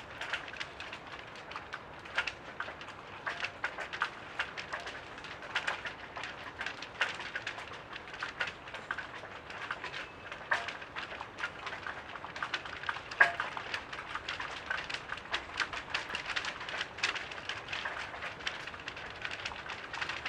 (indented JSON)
{
  "title": "Parque das Nações, Lisboa, Portugal - Flags on the wind - Flags on the wind",
  "date": "2018-03-05 22:35:00",
  "description": "Flapping flags on the wind. Recorded with a AT BP4025 stereo XY mic into a SD mixpre6.",
  "latitude": "38.77",
  "longitude": "-9.09",
  "altitude": "11",
  "timezone": "Europe/Lisbon"
}